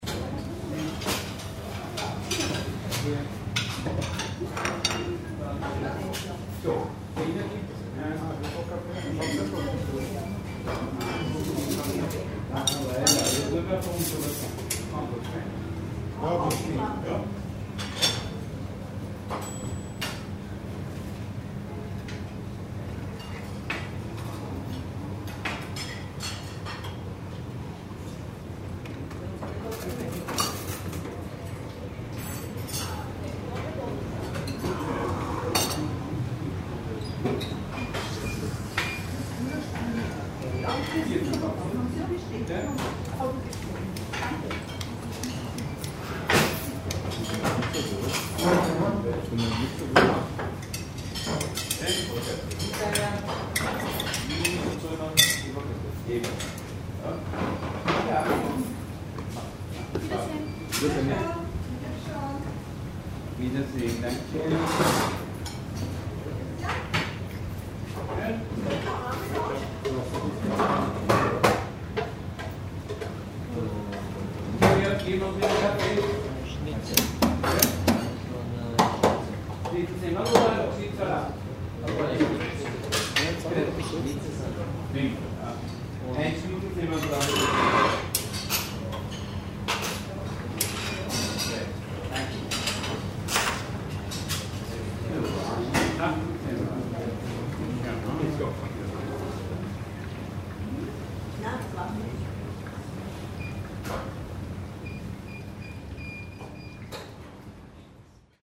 vienna, argentinierstrasse, butcher - wien, argentinierstrasse, fleischerei, imbiss
cityscapes, recorded summer 2007, nearfield stereo recordings
international city scapes - social ambiences and topographic field recordings